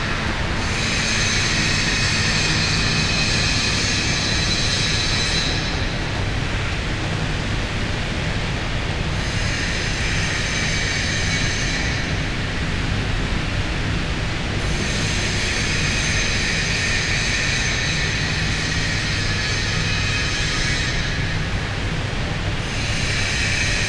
amsterdam, neveritaweg: former ndsm shipyard - the city, the country & me: grinding machine and magpies (?)
former ndsm shipyard, someone busy with a grinder, magpies (?) on the rattling glass roof
the city, the county & me: june 18, 2014
Amsterdam, The Netherlands